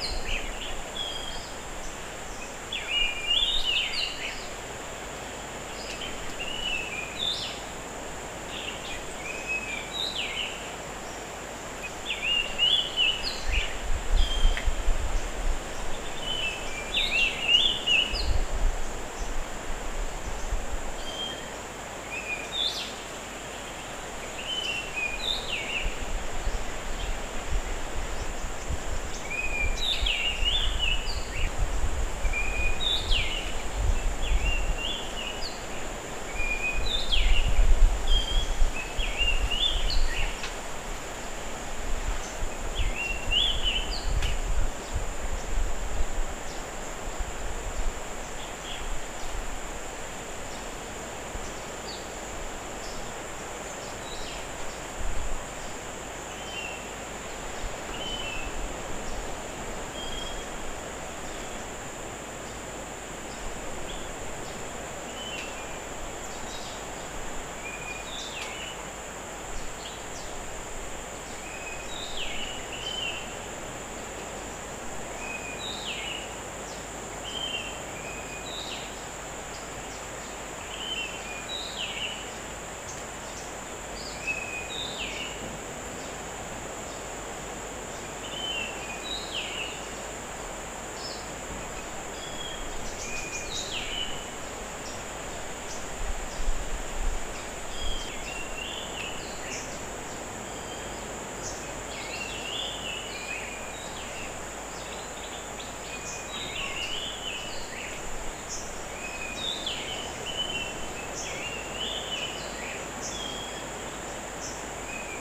Jalan Similajau National Park, Bintulu, Sarawak, Maleisië - songbird and sea in Similajau NP
small black and white birds with relatively big voices at the sseaqside inb Similajau National Park. We called them magpie finch, because that's what they look like to an European swampdweller. Similajau is a quiet amazing place ideal to relax.